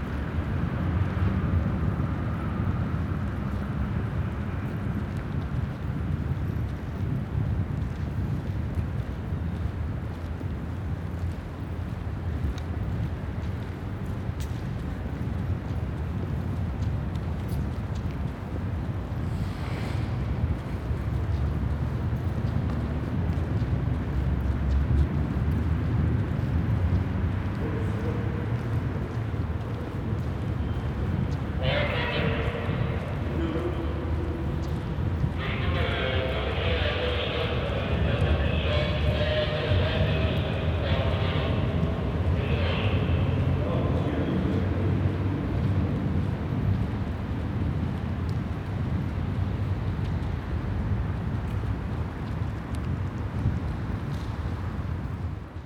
new highway tunnel, Istanbul
new highway tunnel that runs several km long. Muharrem convinced the guard to let us walk in 100m